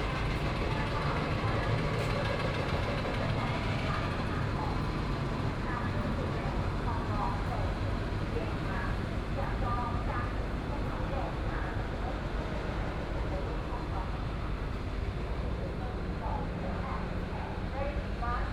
Kaohsiung Station, Taiwan - soundwalk
From the station hall, Then walk towards the direction of the station platform
Sanmin District, Kaohsiung City, Taiwan, 15 May 2014, 10:36